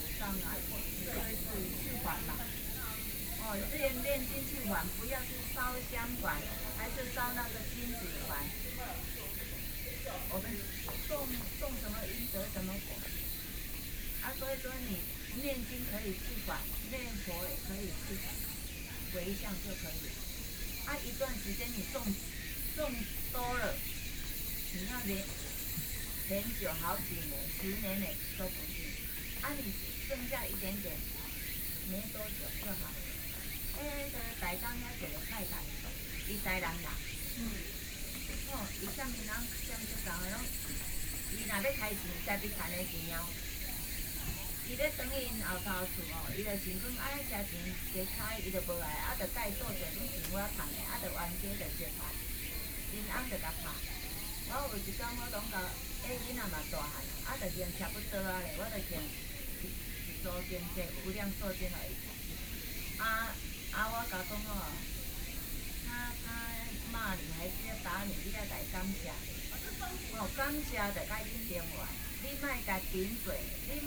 HutoushanPark, Taoyuan City - In the park
Two women are sharing religion, There are people playing badminton nearby, Birds, Sony PCM D50 + Soundman OKM II
Taoyuan County, Taiwan, 11 September 2013